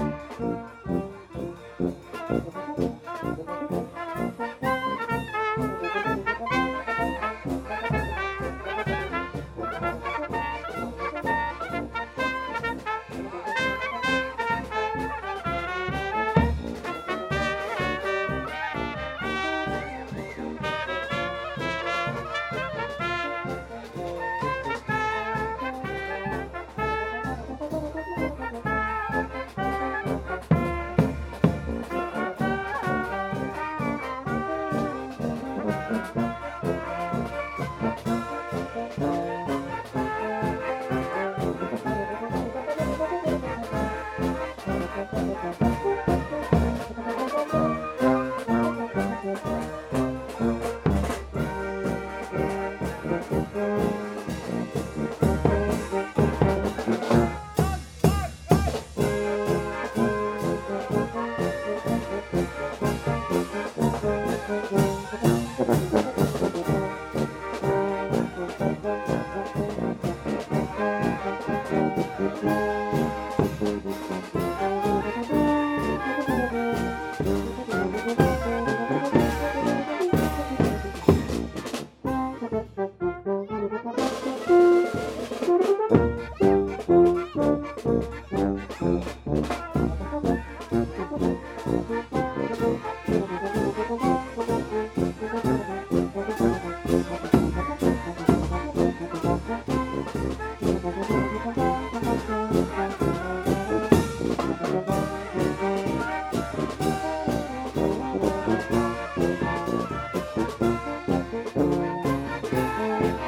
Court-St.-Étienne, Belgique - German fanfare
During the annual feast of Court-St-Etienne, a belgian fanfare in playing in the street. They wear traditionnal costumes from Germany and they play Oberbayern music. The name is Die Lustigen Musikanten aus Dongelberg.